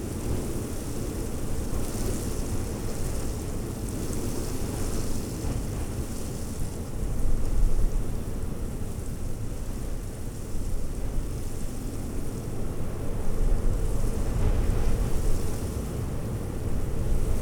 lancken-granitz: neuensiener see - the city, the country & me: reed stirred by the wind
dry reed stirred by the wind during storm
the city, the country & me: march 7, 2013